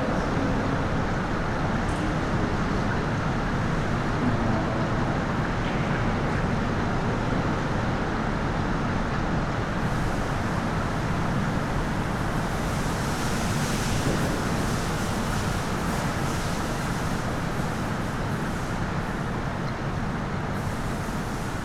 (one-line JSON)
{"title": "Pempelfort, Düsseldorf, Deutschland - Düsseldorf, Münstertherme, swim hall", "date": "2013-01-14 08:30:00", "description": "Inside an old, classical designed public swim hall. The sound of the empty hall ventilation and heating system and the silent gurgle and splishes of the water in the pool.\nIn the distance accents and voices of workers who clean the place.\nThis recording is part of the intermedia sound art exhibition project - sonic states\nsoundmap nrw -topographic field recordings, social ambiences and art places", "latitude": "51.24", "longitude": "6.78", "altitude": "43", "timezone": "Europe/Berlin"}